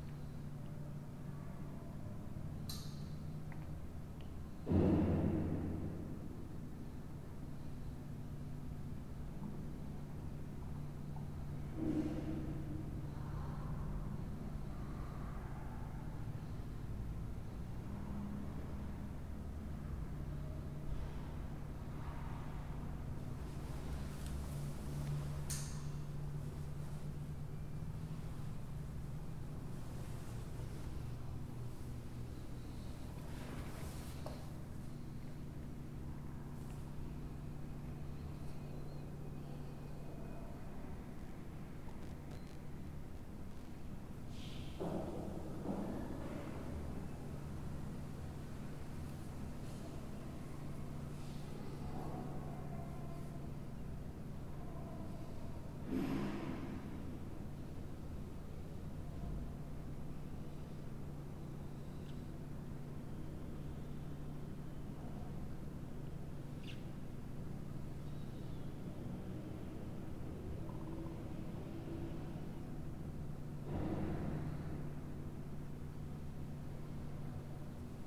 Av Wallace Simonsen - Nova Petrópolis, São Bernardo do Campo - SP, 09771-120, Brasil - Building ladder
This is a building ladder recorded at the second floor of a 25 floor building. It was recorded by a Tascam DR-05.